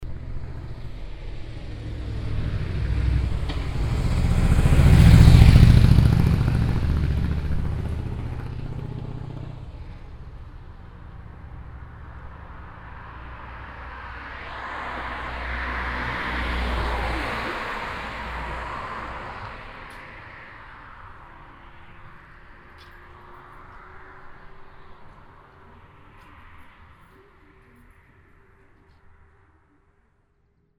rodershausen, motorbike and car
At the main street that ist leading through the village. A motorbike and a car passing by.
Rodershausen, Motorrad und Auto
Auf der Hauptstraße, die durch das Dorf führt. Ein Motorrad und ein Auto fahren vorbei.
Rodershausen, motocyles
Sur la rue principale qui traverse le village. Une moto et une voiture qui passent.